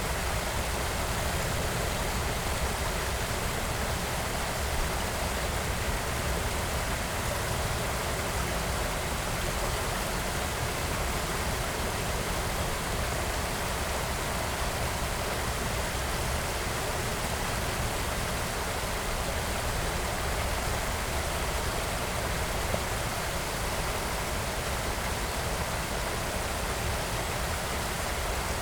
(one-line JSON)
{"title": "Biesdorf, Berlin, Deutschland - river Wuhle, pond", "date": "2016-04-16 11:15:00", "description": "observing a heron while recording the river Wuhle at a small pond, Biersdorf, Berlin\n(SD702, DPA4060)", "latitude": "52.49", "longitude": "13.57", "altitude": "35", "timezone": "Europe/Berlin"}